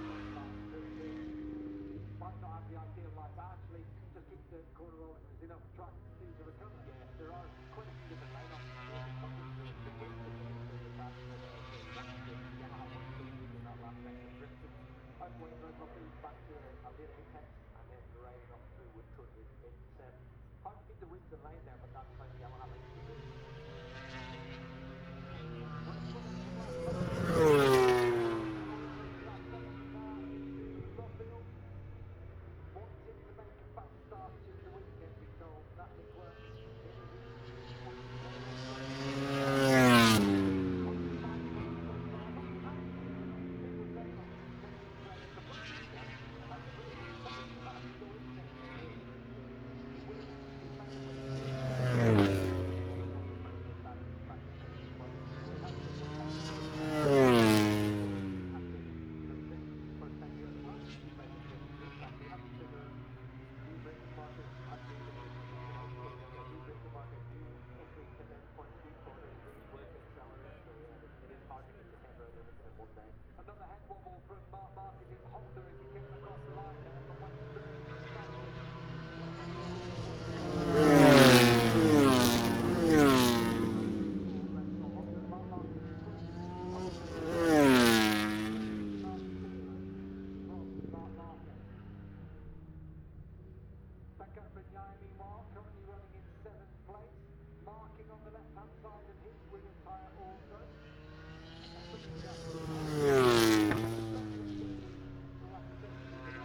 Silverstone Circuit, Towcester, UK - british motorcycle grand prix 2021 ... moto grand prix ...
moto grand prix free practice two ... maggotts ... olympus 14 integral mics ...
27 August